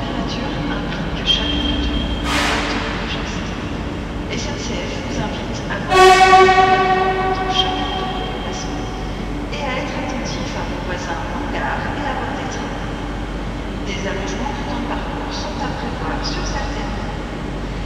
Rue Charles Domercq, Bordeaux, France - BDX Gare 02
train station
Captation : ZOOMH6
12 August 2022, 10:10